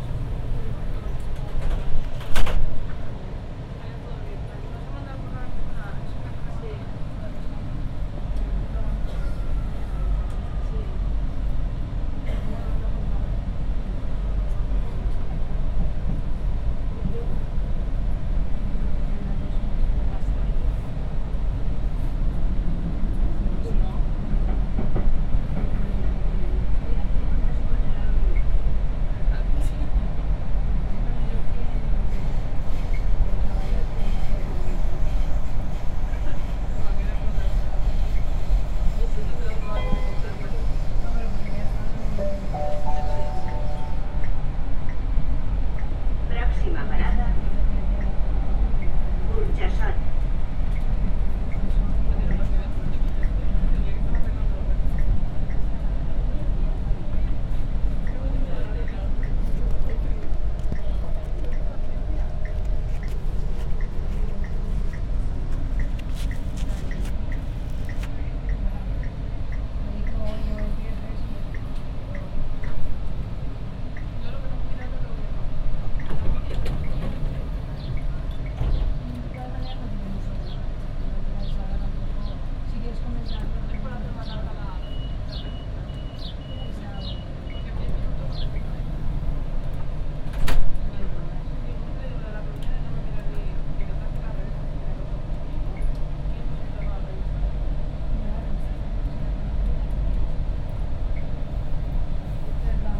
Burjasot, Valencia, España - Metro
Metro en Burjassot. Luhd binaural + Roland